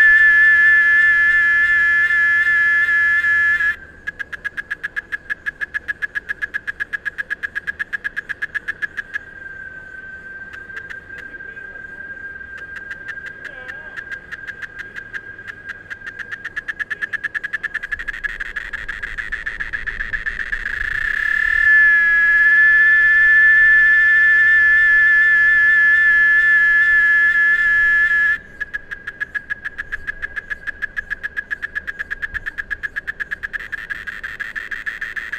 Novo Horizonte, Feira de Santana - BA, Brasil - canto da cigarra
O áudio o canto da cigarra foi gravado no mes fevereiro de 2014, no campus da Universidade Estadual de Feira.Foi utilizado para captação um microfone Sennheiser ME66, com abafador de vento, vara e uma câmera marca sony, modelo Z7, com duas entradas xlr de áudio e fone de ouvido.
Feira de Santana - Bahia, Brazil, 19 February 2014, ~09:00